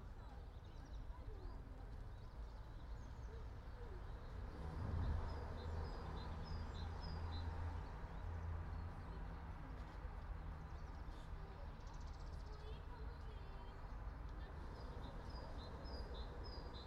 all the mornings of the ... - mar 21 2013 thu